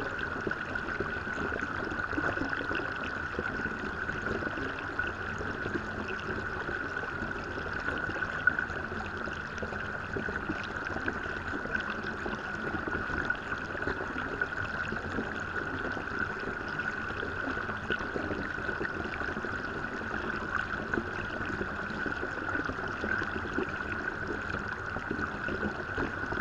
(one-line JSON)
{"title": "Strawberry Park Natural Hot Springs, CO, USA - Strawberry Hot Springs Hydrophone", "date": "2016-01-02 09:20:00", "description": "Recorded with a pair of JrF D-Series hydrophones into a Marantz PMD661", "latitude": "40.56", "longitude": "-106.85", "altitude": "2306", "timezone": "America/Denver"}